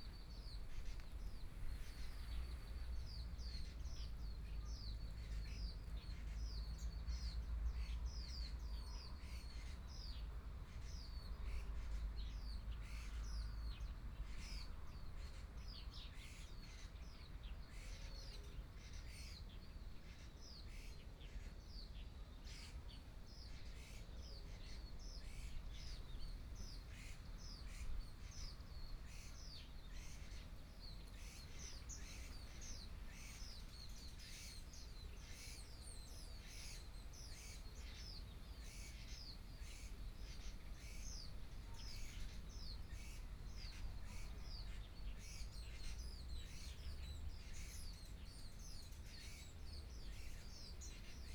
東港金斗公廟, Jhuangwei Township - In front of the temple
In front of the temple, Traffic Sound, Birdsong sound, Small village
Sony PCM D50+ Soundman OKM II